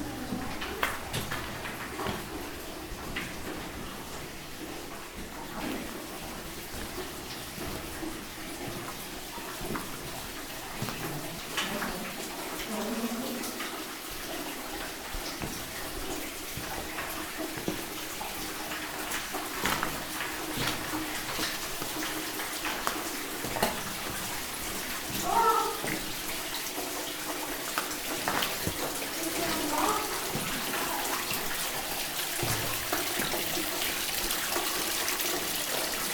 caprauna, fereira, water & steps in tunnel - caprauna, fereira, in tunnel 02
second recording in the same tunnel
soundmap international: social ambiences/ listen to the people in & outdoor topographic field recordings